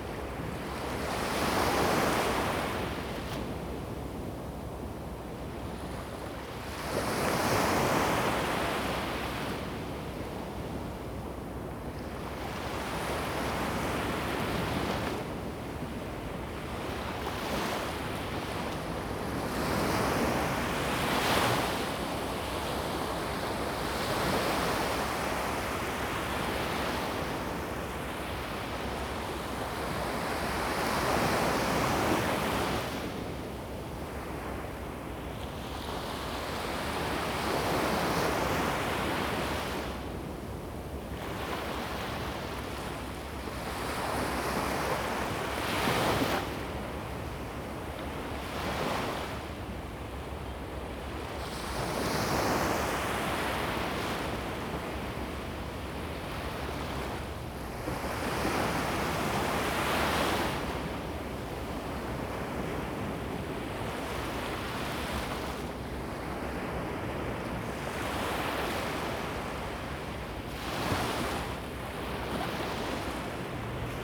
西子灣海水浴場, Kaohsiung County - Beach
Sound of the waves, Beach
Zoom H2n MS+XY
Kaohsiung City, Taiwan, 22 November 2016, ~15:00